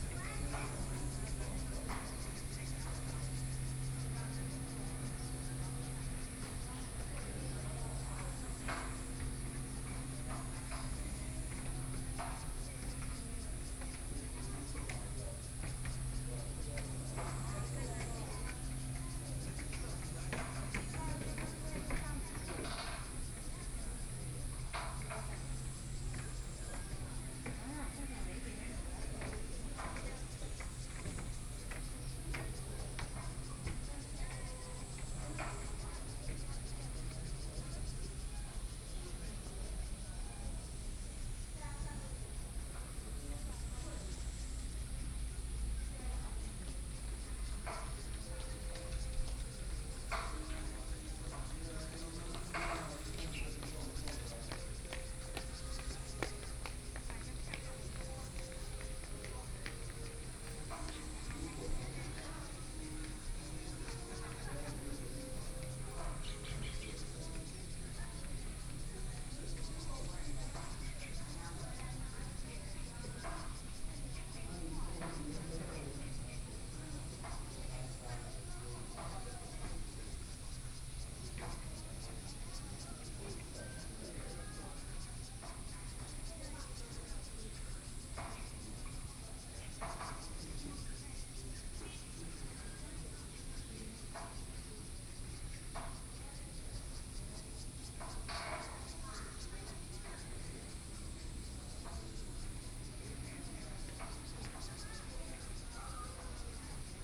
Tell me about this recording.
Footsteps, Tourists, Yacht on the lake, Birdsong, Very hot days